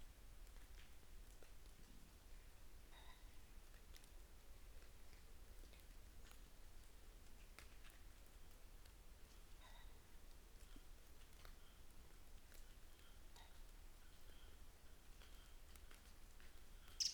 Green Ln, Malton, UK - pheasants leaving roost ...
pheasants leaving roost ... dpa 4060s in parabolic to MixPre3 ... bird calls from ... wren ... blackbird ... treecreeper ... crow ... redwing ... fieldfare ... robin ... red-legged partridge ...
England, United Kingdom, 29 November